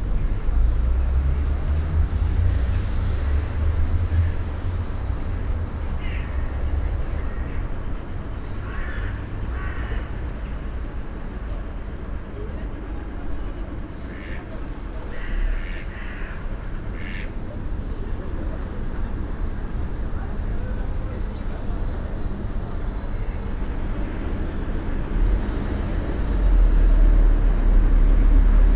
luxembourg, rue münster, at bridge
At a small river nearby an old bridge. The sound of ducks on the water and motor sounds from a boat and a plane in the distance.
international city scapes - topographic field recordings and social ambiences
17 November 2011